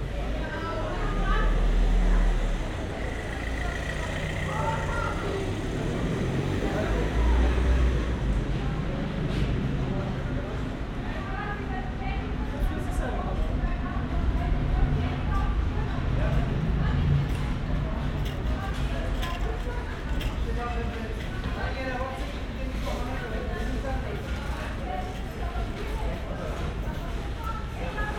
Weidengasse, Köln - Friday evening street ambience
street ambience Weidengasse Köln
(Sony PCM D50 + Primo EM172)